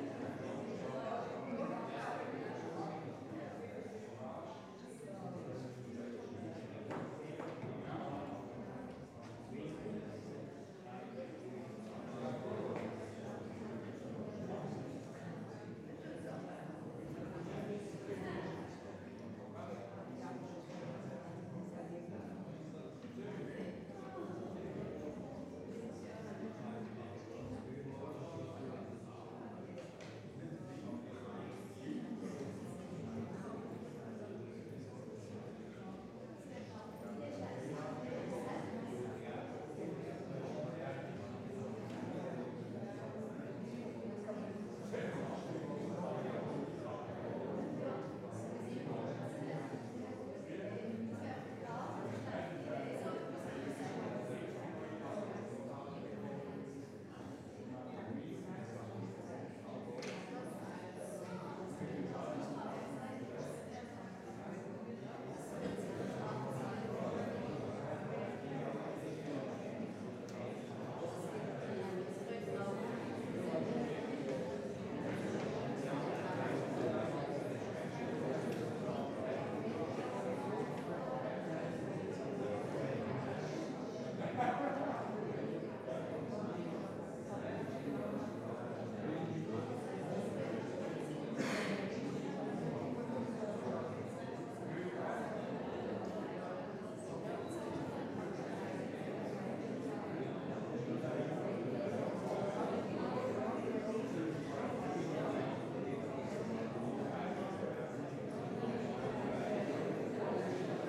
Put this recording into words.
Vor einem Konzert. Tascam DA-P1 7 TLM 103